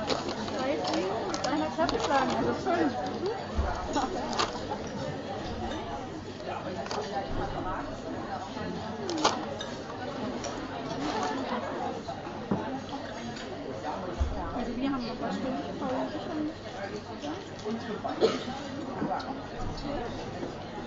Universität Potsdam, Campus Golm, Mensa, das große Blabla
Das menschliche Ohr besitzt ca. 30.000 afferente Nervenfasern, die die Verarbeitung der eintreffenden Schallwellen zum Gehirn weiterleiten. Aber es besitzt auch ca. 500 efferente Nervenfasern, die das Gehör willentlich steuern können - das ist selektive Aufmerksamkeit. In der überfüllten Mensa bleibt so noch Konzentration für ein Gespräch mit der besten Freundin. Mein Dictaphon kann das natürlich nicht.